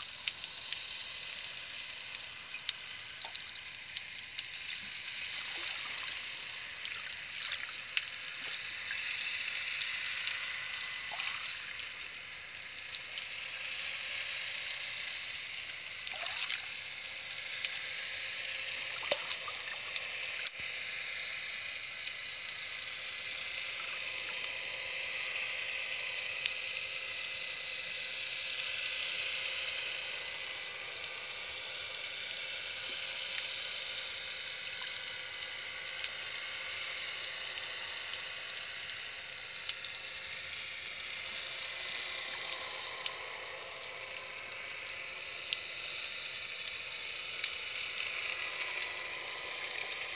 hydrophone recording on Burgaz ada, Istanbul
trying a self made hydrophone on a visit to Burgaz Island
Gezinti Yolu Cd, Burgazada, Turkey, 22 February 2010